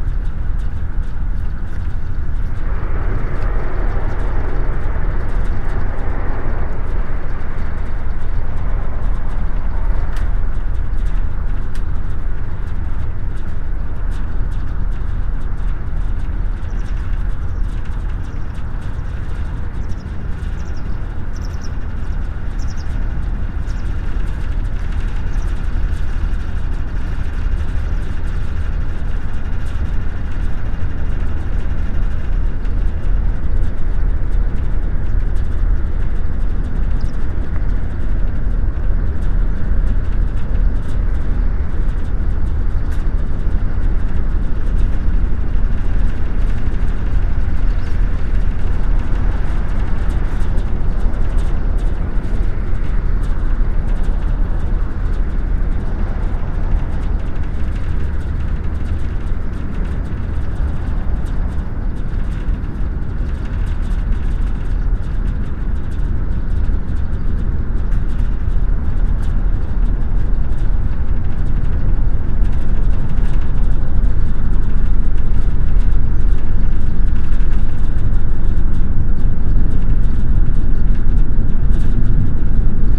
Estonia oil shale mine
The sounds of the surroundings and the wall of the biggest oil shale mine in Estonia
2 July 2010, 14:50